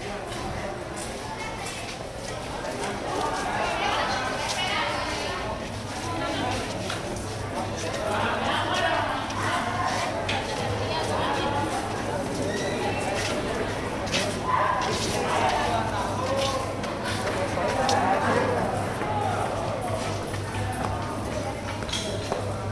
Colegio Pinillos, Mompós, Bolívar, Colombia - Pinillos
Students finishing their day at the courtyard of Colegio Pinillos in Mompox